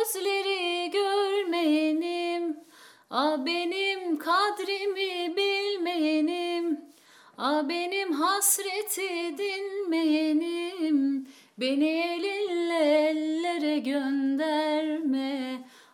Pinar Mistik
A Turkish Song